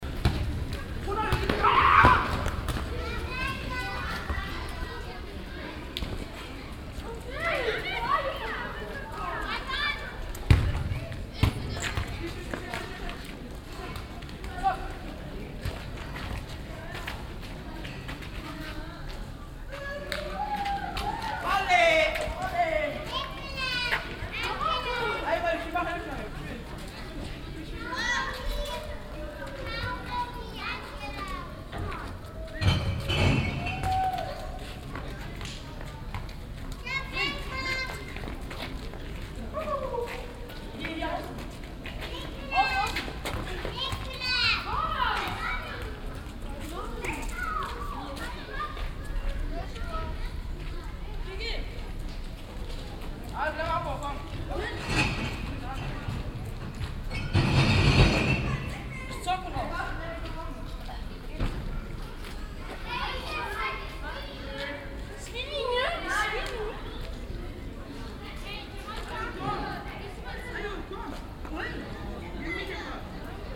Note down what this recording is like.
nachmittags auf dem innenhof, fussballspielende kinder, soundmap nrw: topographic field recordings, social ambiences